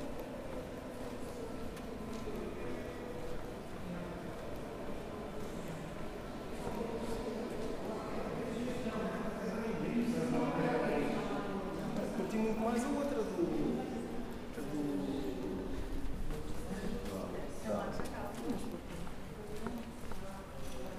Nossa Senhora do Pópulo, Portugal - Soundwalk from Ep1 to Ep2
Recorded with a ZoomH4N. Sound-walking from Ep1 - 20 to Ep2 Parking Lot. Some wind.